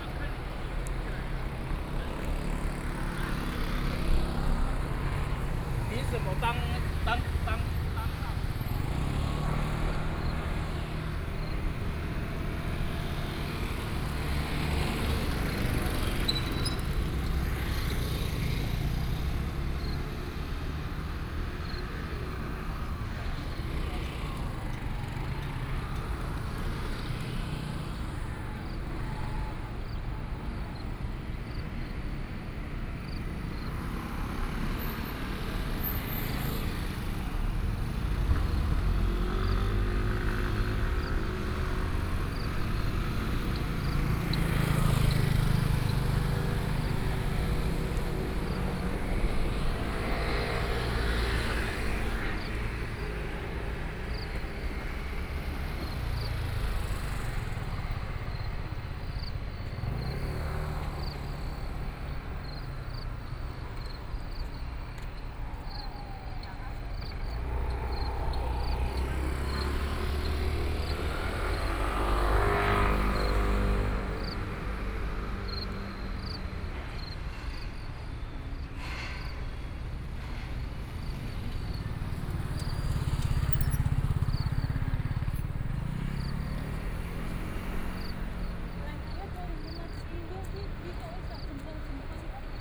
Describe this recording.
Traffic Sound, In the railway level crossing, Trains traveling through, Insects sound